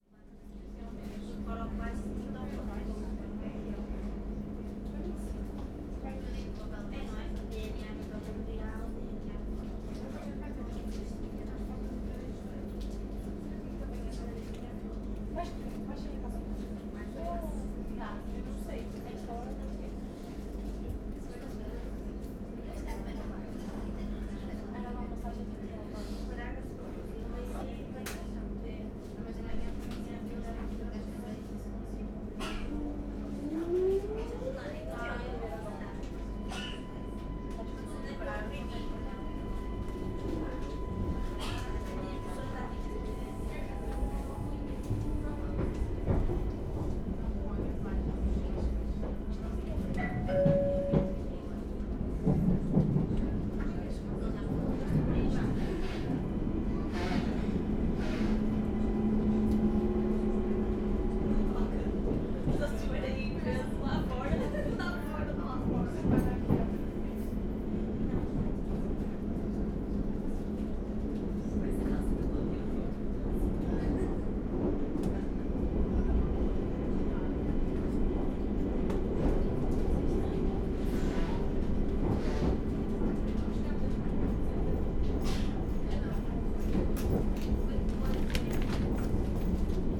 Porto, São Bento Train Station - departure
on a train departing towards Guimaraes. sounds of the train. a group of teenage girls talking and laughing.
Porto, Portugal, 2 October 2013